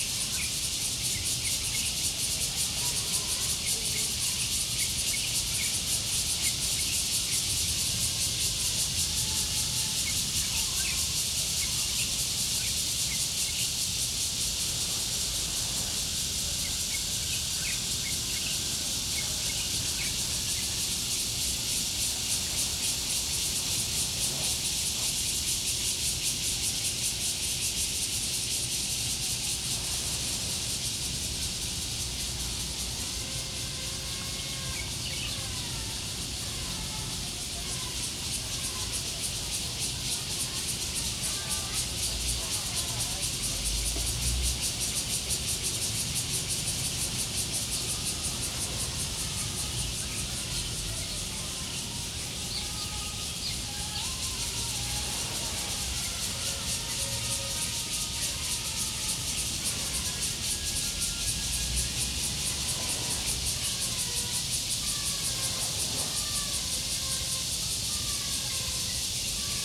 榕堤, Tamsui District 新北市 - Cicadas cry
Hot Weather, Cicadas cry
Zoom H2n MS+XY
New Taipei City, Taiwan, 17 July 2015, ~11:00